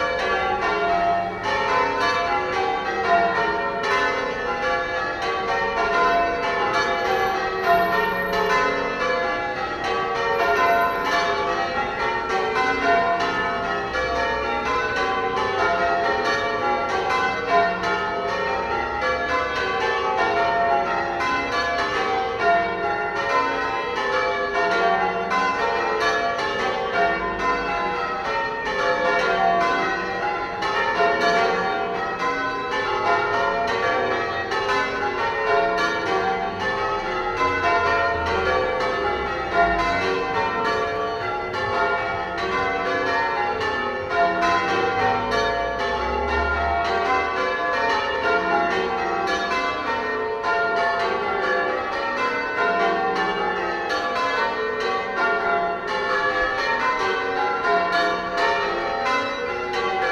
{"title": "Bell-ringing practice, St. Giles, Reading, UK - Old bells in a new town", "date": "2017-05-10 20:33:00", "description": "There are eight bells in the tower at St. Giles, dating back to 1793. The youngest bell was made in 1890. I adore knowing that this sound connects me to past listeners in Reading, who would have also heard the glorious sound of the bells ringing. For a long time I had thought bell ringing practice was on Thursdays, but now I know it's Wednesday, I can be sure to listen in more regularly. I love the density of microtones, semitones, harmonics and resonances in the sounds of the bells ringing, and the way they duet with the ebb and flow of traffic on Southampton Street. I was right under the tower making this recording, with my trusty EDIROL R-09.", "latitude": "51.45", "longitude": "-0.97", "altitude": "43", "timezone": "Europe/London"}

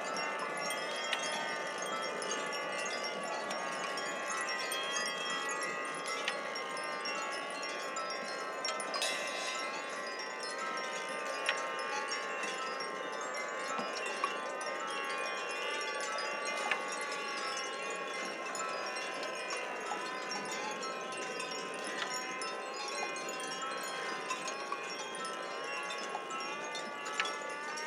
{"title": "Harmonic Fields, Final - distant", "date": "2011-06-05 13:56:00", "description": "30m away from the Final section of the installation.\nLakes Alive brought French artist and composer, Pierre Sauvageot (Lieux Publics, France) to create an interactive musical soundscape on Birkrigg Common, near Ulverston, Cumbria from 3-5 June 2011.\n500 Aeolian instruments (after the Greek god, Aeolus, keeper of the wind) were installed for 3 days upon the Common. The instruments were played and powered only by the wind, creating an enchanting musical soundscape which could be experienced as you rested or moved amongst the instruments.\nThe installation used a mixture of traditional and purpose built wind instruments. For example metal and wood wind cellos, long strings, flutes, Balinese paddyfield scarecrows, sirens, gongs, drums, bells, harps and bamboo organs. They were organised into six movements, each named after a different wind from around the world. The sounds that they created and the tempo of the music, depended entirely upon the strength and the direction of the wind.", "latitude": "54.16", "longitude": "-3.10", "altitude": "122", "timezone": "Europe/London"}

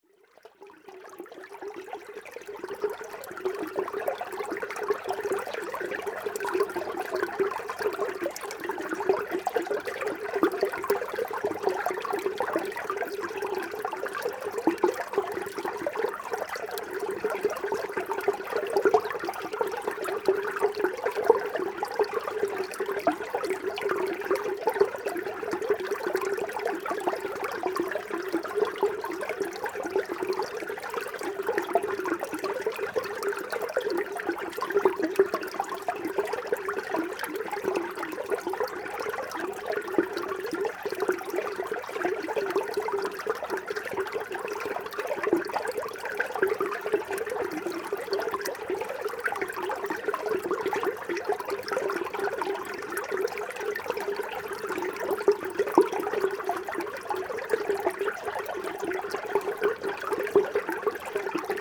{"title": "Ottange, France - Strange pipe", "date": "2016-03-26 15:00:00", "description": "In an underground mine, a water stream is busting in a pipe. The microphones are buried into the pipe. As this, you can hear the inside ambience. You couldn't really hear this when walking. You have just to spot the pipes, as they often offer quaint vibes. After, you're lucky or not, as some pipes are dreary.", "latitude": "49.44", "longitude": "6.02", "altitude": "386", "timezone": "Europe/Paris"}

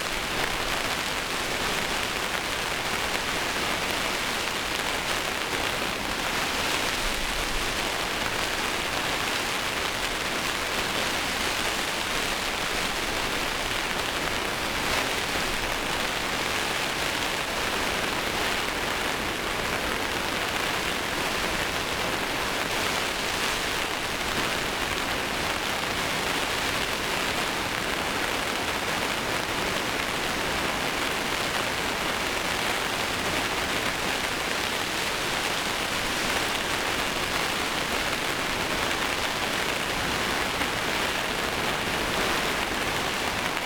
{"title": "Chapel Fields, Helperthorpe, Malton, UK - inside poly tunnel ... outside stormy weather ...", "date": "2020-06-11 21:45:00", "description": "inside poly tunnel ... outside stormy weather ... dpa 4060s to Zoom H5 ... mics clipped close to roof ...", "latitude": "54.12", "longitude": "-0.54", "altitude": "77", "timezone": "Europe/London"}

